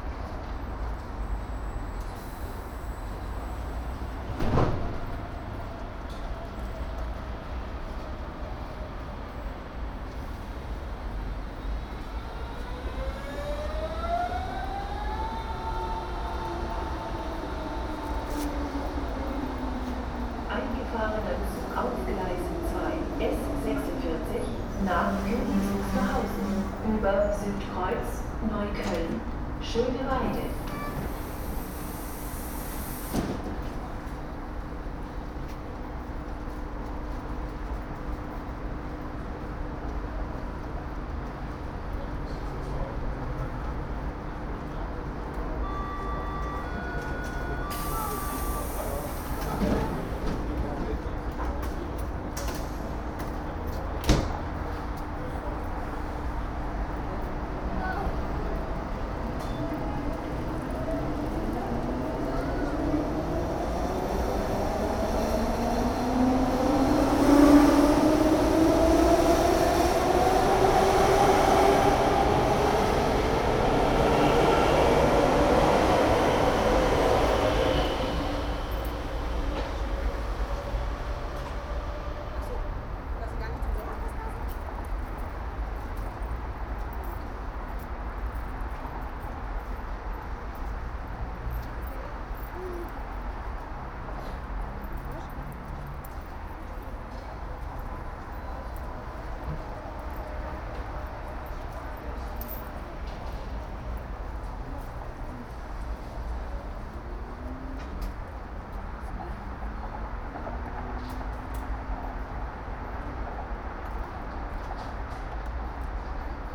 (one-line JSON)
{"title": "Innsbrucker Platz, Berlin, Deutschland - Innsbrucker platz S-Bahn Station", "date": "2012-06-27 15:37:00", "description": "For my multi-channel work \"Ringspiel\", a sound piece about the Ringbahn in Berlin in 2012, I recorded all Ringbahn stations with a Soundfield Mic. What you hear is the station innsbruckerplatz in the afternoon in June 2012.", "latitude": "52.48", "longitude": "13.34", "altitude": "39", "timezone": "Europe/Berlin"}